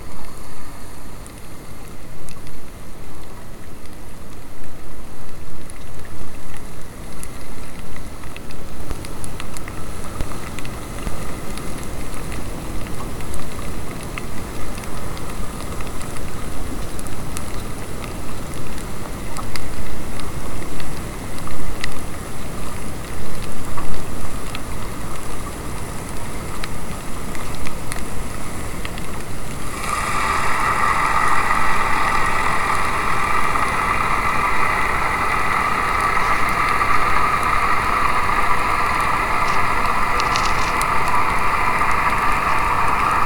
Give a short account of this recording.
Underwater recording (3m deep) which in parts sounds more like a fireplace for me. Recorded with Cold Gold Hydrophone on Tascam DR 100 Mk3.